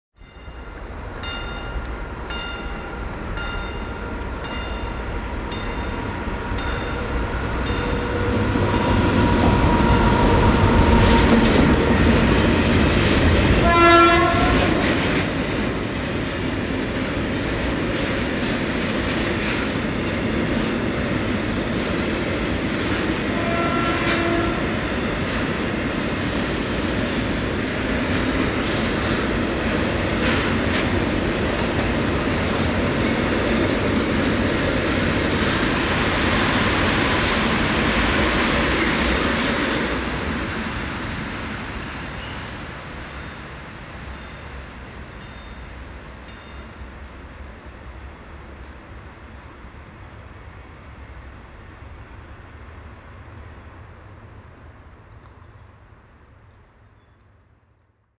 ratingen, zum blauen see, bahngleis, kalkbahn
durchfahrt der kalkbahn an einem geschlossenen bahndurchgang, nachmittags
- soundmap nrw
project: social ambiences/ listen to the people - in & outdoor nearfield recordings